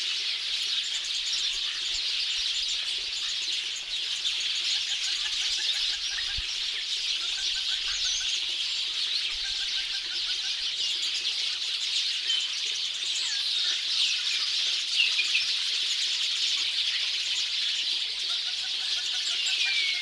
Almeidinha, Guarda, Portugal - Evening Birds
Place: Almeidinha, Guarda, Portugal
Recorder: Olympus LS-P4
Situation:Birds chirping loudly on top of a tree in a portuguese village up north.
Recorded without any windshield, using the built-in 3 microphones of the recorder.